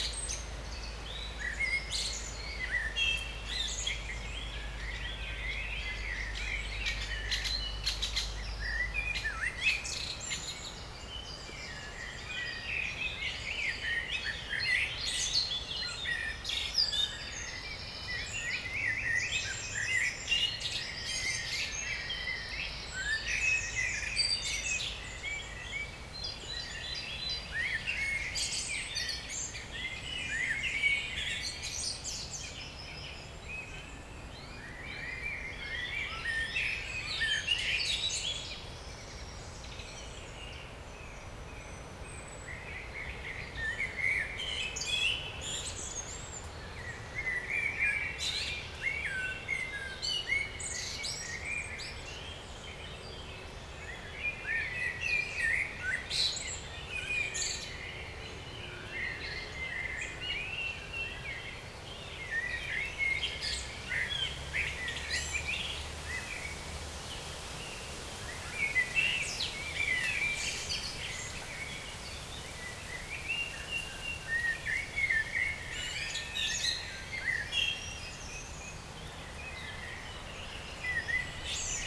Beselich Niedertiefenbach, Ton - spring evening ambience
pond in forest, place revisited on a warm spring evening
(Sony PCM D50, DPA4060)